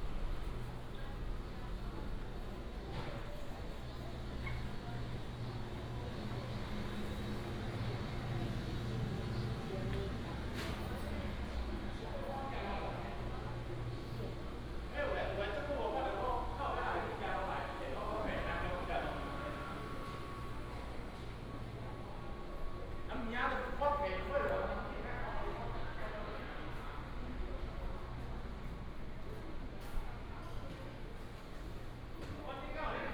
員林華城市場, Yuanlin City - Rest in the market
Rest in the market, Traffic sound, Walk through the market
Changhua County, Taiwan, 6 April 2017